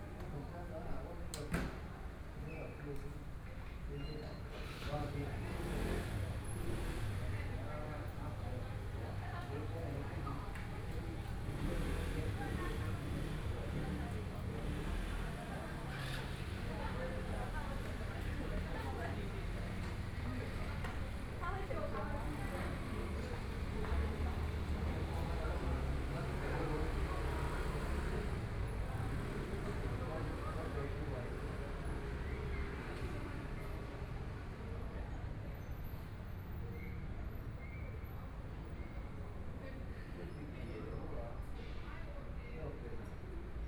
Outside the library, Binaural recordings, Zoom H4n+ Soundman OKM II ( SoundMap2014016 -18)
Taitung City, Taitung County, Taiwan, 16 January 2014, 17:05